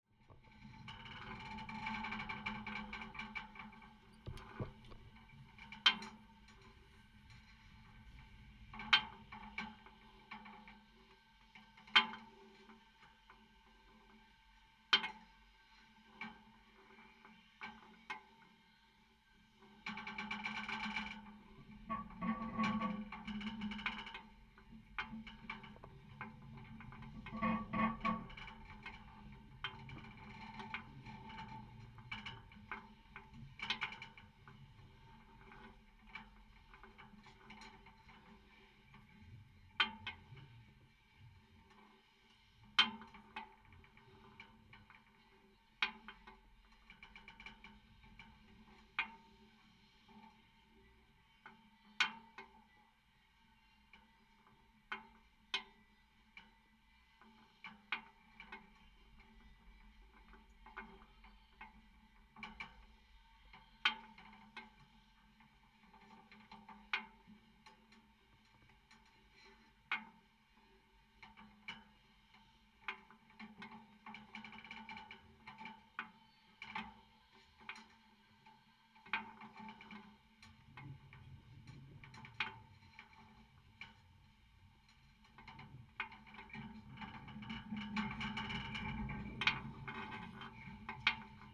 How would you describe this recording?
aluminum column holding big concert cage's roof. contact microphone